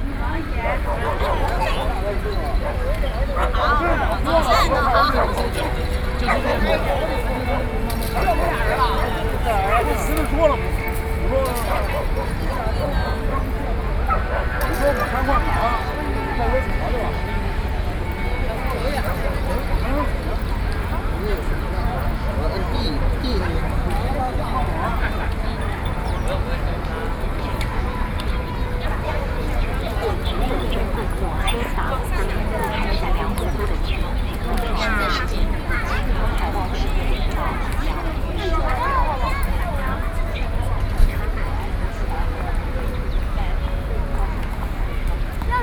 Sun Yat-Sen Memorial Hall, Taipei - in the square
台北市信義區仁愛路4段505號國父紀念館西側門廣場停車場, 4 November 2012, 16:14